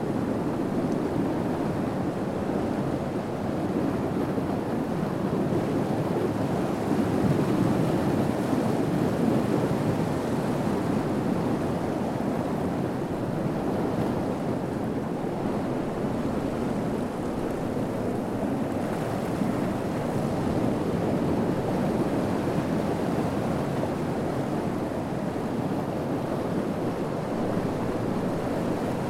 {"title": "Solkan, Slovenija - Reka Soča", "date": "2017-06-07 16:45:00", "description": "A windy, post-rainy take of the Soča river.\nRecorded with Zoom H5 + AKG C568 B", "latitude": "45.97", "longitude": "13.64", "altitude": "61", "timezone": "Europe/Ljubljana"}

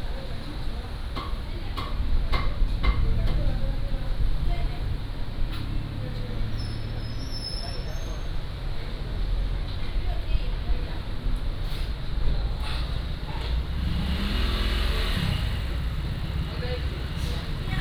{"title": "Guangfu Rd., Yuli Township - In the restaurant", "date": "2014-10-09 17:45:00", "description": "In the restaurant", "latitude": "23.33", "longitude": "121.31", "altitude": "137", "timezone": "Asia/Taipei"}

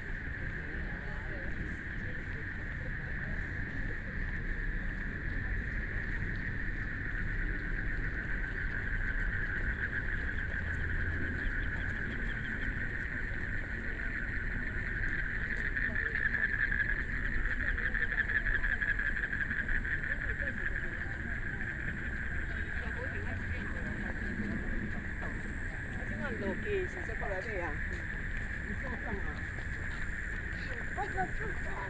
{"title": "內湖區湖濱里, Taipei City - Walking along the lake", "date": "2014-03-19 20:50:00", "description": "Walking along the lake, The park at night, Traffic Sound, People walking and running, Frogs sound\nBinaural recordings", "latitude": "25.08", "longitude": "121.58", "altitude": "23", "timezone": "Asia/Taipei"}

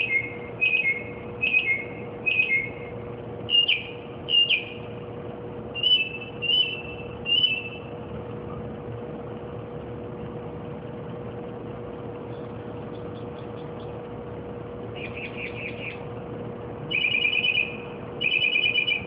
{"title": "jefferson ave, brooklyn", "date": "2010-06-04 02:15:00", "description": "bird singing and chirping various car-alarm-like-songs in brooklyn - with occasional street noises", "latitude": "40.68", "longitude": "-73.94", "altitude": "15", "timezone": "America/New_York"}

